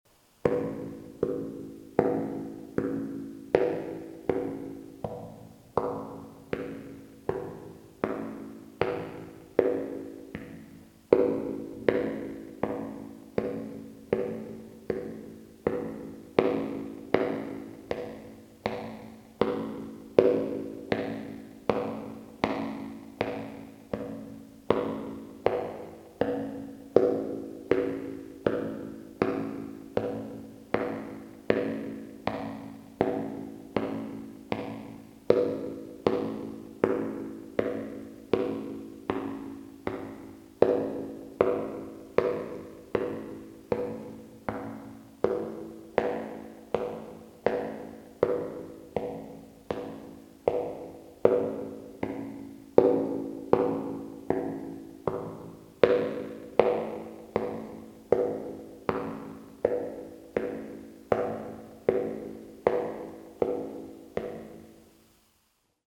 Differdange, Luxembourg - Underground mine techno
In an underground mine, I heard a strange sound of me walking. I stoped and tried again and again. In a very specific place, if I beat the ground with my boot, there's a strange like-a-tube reverb. It's because of the tunnel form. My friends were 2 meters beside and heard nothing. When they went to the place and beaten the ground their turn, it was working. Only the person beating can hear it and 10 centimeters further, there's nothing.
Recorded binaural with Lu-hd mics and strictly unedited.